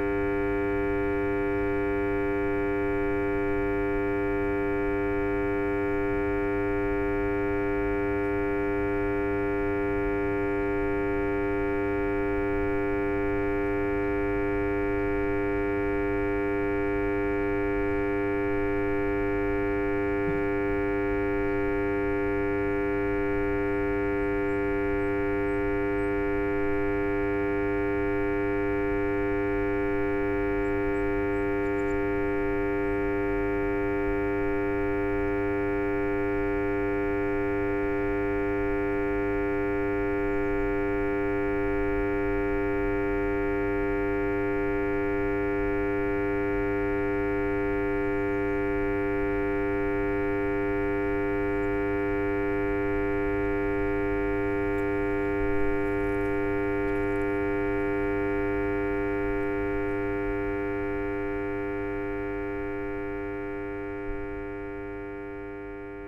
Maintenon, France - Power station
A power station makes an horrible noise. Microphones are put inside the holes of the door.